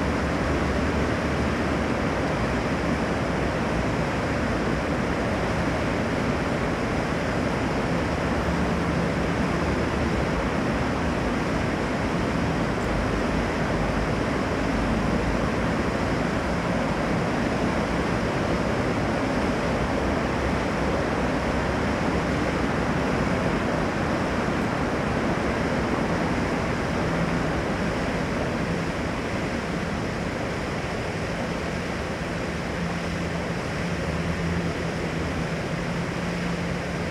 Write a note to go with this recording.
water sound of the Wienfluss canal reflecting off a concrete overhang